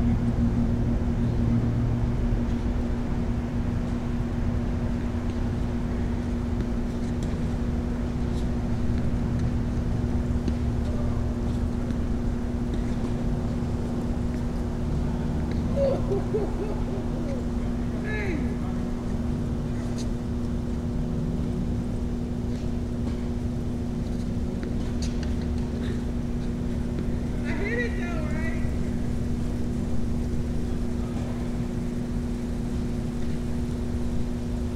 Recording near two tennis courts in Cobb County Rhyne Park. Games were being played quietly in both courts. A low hum emanates from the green electrical box behind the microphones. Other various sounds can be heard from around the area.
[Tascam DR-100mkiii & Primo EM-272 omni mics]